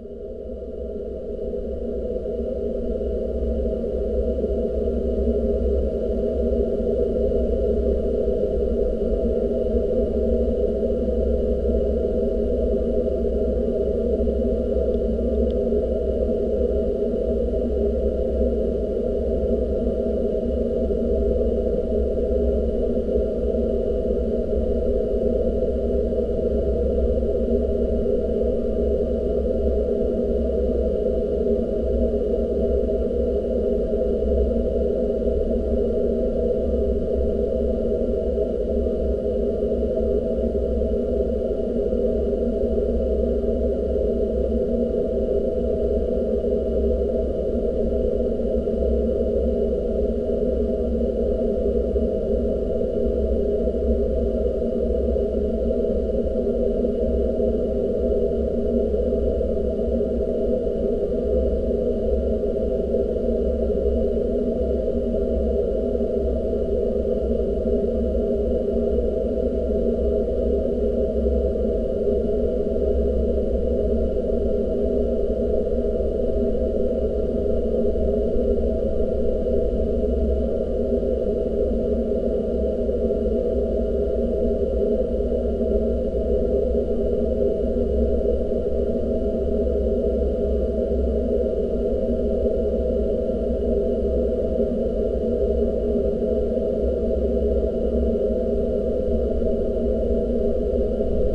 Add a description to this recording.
a pair of contact mics and LOM geophone on a fence of the dam